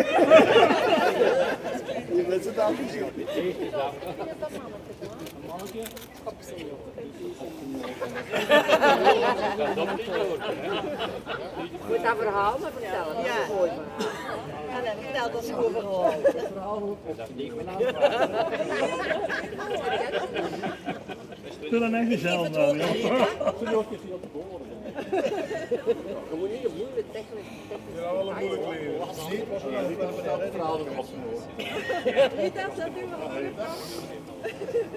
Mechelen, Belgique - Old persons visiting Mechelen
On a quiet Sunday morning, a group of old persons is visiting Mechelen. They are walking in the old cobblestones streets, discussing and laughing about anything. Far away, the OLV-over-de-Dijlekerk bells are ringing.